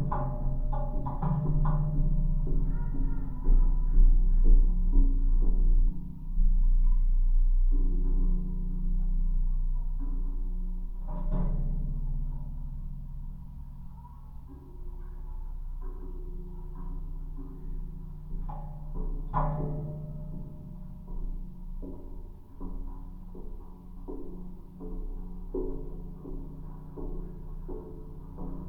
Utena, Lithuania, handrail
LOM geophone on the handrails of passengers' bridge. an old woman is feedings birds. some crows walks on the handrails
15 February, 16:45